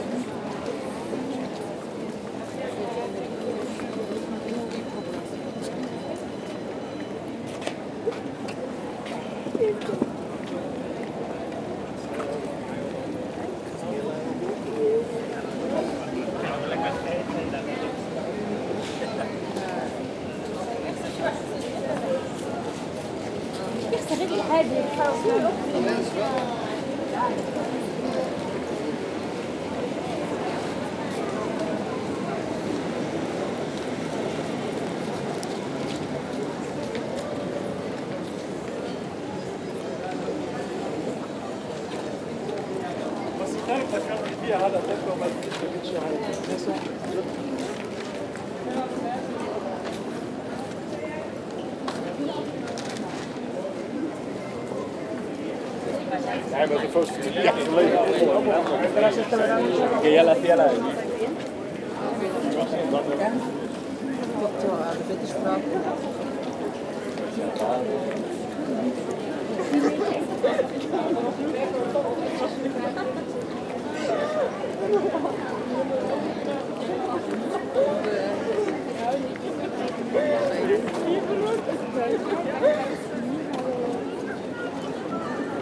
Antwerpen, Belgique - The Meir street ambiance, piano player
Into the commercial street called Meir, on a colorful saturday afternoon, people walking quietly. A piano player, called Toby Jacobs. He's speaking to people while playing !